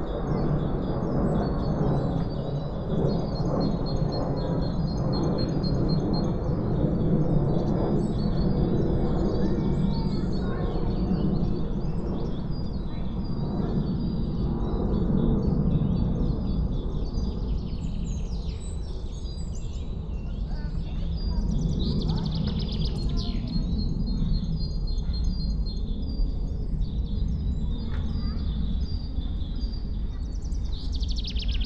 the nearby camping awakens, people rise tents and fix their lodges. forest ambience, frequently disrupted by aircrafts departing from Berlin Schönefeld airport.
(SD702, NT1A)